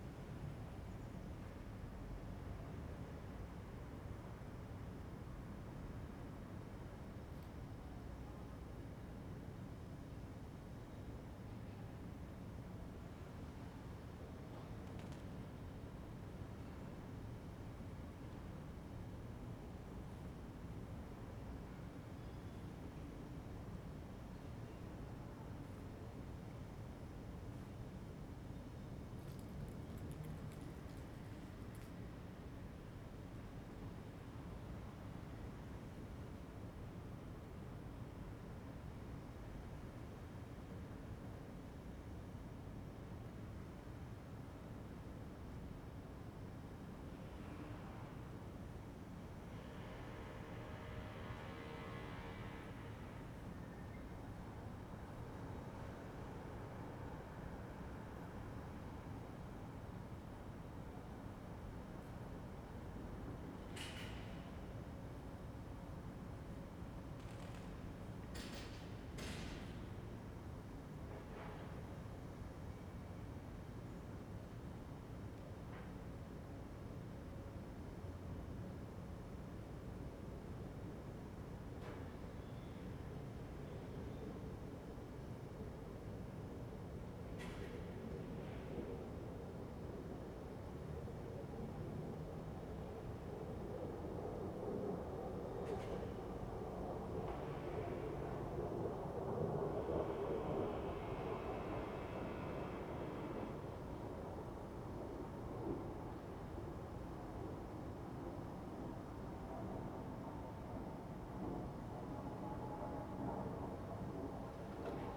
Ascolto il tuo cuore, città. I listen to your heart, city. Several chapters **SCROLL DOWN FOR ALL RECORDINGS** - Terrace late December round 2 p.m. and barking Lucy in the time of COVID19
"Terrace late December round 2 p.m. and barking Lucy in the time of COVID19" Soundscape
Chapter CLXXXIII of Ascolto il tuo cuore, città. I listen to your heart, city
Tuesday December 28th 2021. Fixed position on an internal terrace at San Salvario district Turin, About one year and four months after emergency disposition due to the epidemic of COVID19.
Start at 2:20 p.m. end at 2:57 p.m. duration of recording 36'55''.
Torino, Piemonte, Italia, 28 December 2021